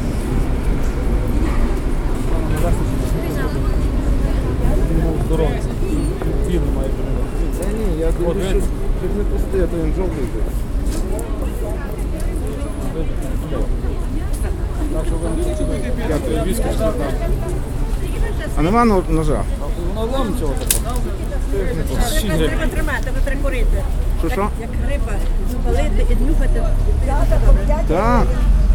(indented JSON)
{
  "title": "Lychakivs'kyi district, Lviv, Lviv Oblast, Ukraine - Vinnikivskiy Market",
  "date": "2015-04-04 09:15:00",
  "description": "Among vendors at the sidewalk in front of the market, selling home-grown and -made produce. Binaural recording.",
  "latitude": "49.84",
  "longitude": "24.05",
  "altitude": "312",
  "timezone": "Europe/Kiev"
}